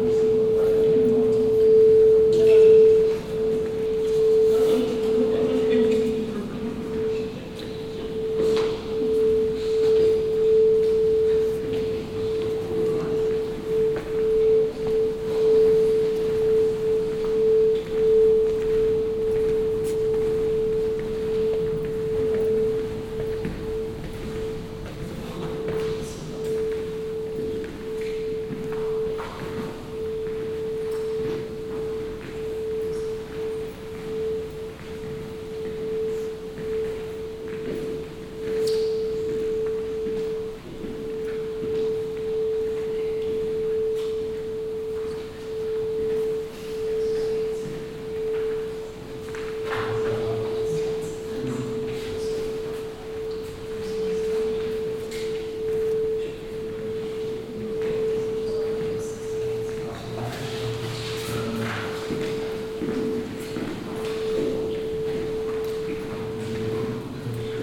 Berlin, Hamburger Bhf, exhibition - berlin, hamburger bhf, exhibition
Inside the left wing of the exhibition building on the first floor. The sound of the Ikeda exhibition db and visitors walking around - here the white room.
soundmap d - social ambiences, art places and topographic field recordings
Invalidenstraße, Berlin, Germany, 7 February 2012